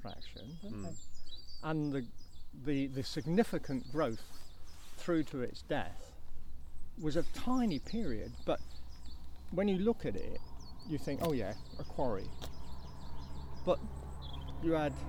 Talk to Dartmoor Park Ranger 8.42am
Devon, UK, 23 March 2011